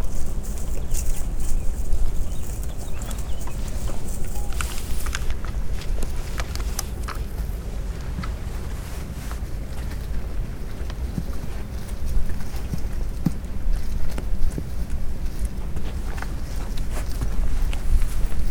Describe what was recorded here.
Horses in a pasture. You can hear horse chew (-: